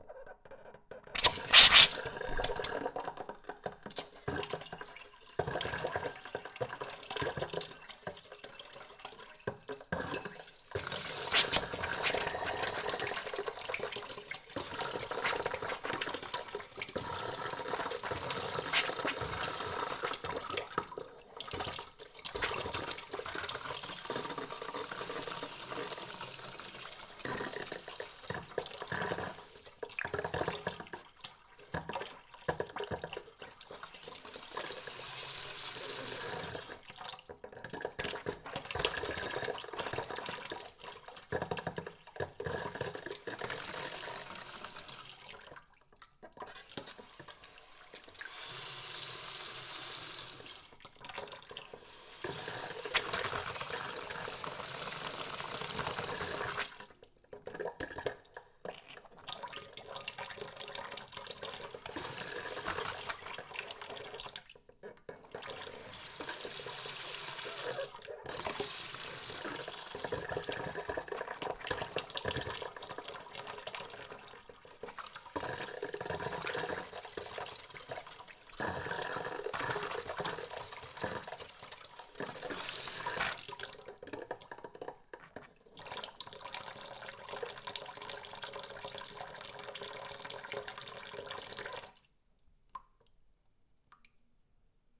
Poznan, Piatkowo district - rusty water
opening a faucet after water shortage. some nasty rumbling coming from the pipes.
Poznań, Poland, 25 March 2015, 12:27